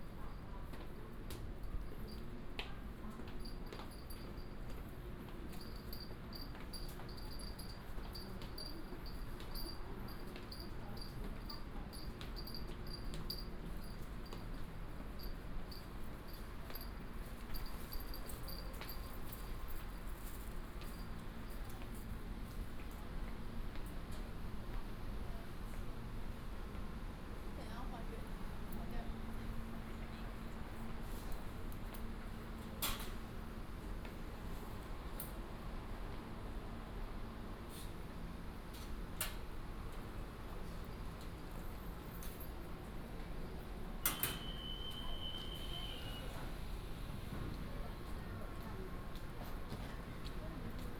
North Hsinchu Station, 新竹市東區 - walking in the Station
walking in the Station